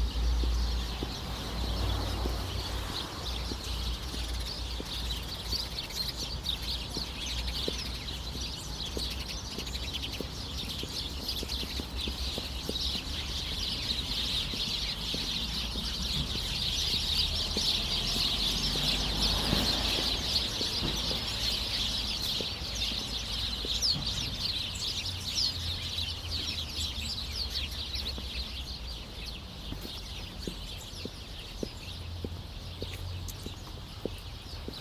berlin, birds in a fence
recorded nov 15th, 2008.
Berlin, Germany